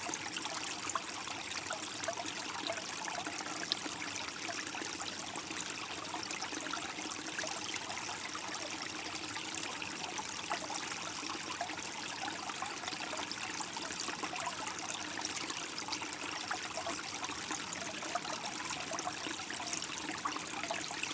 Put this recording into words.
Into the Rixensart forest, sound of a small stream during the noiseless winter.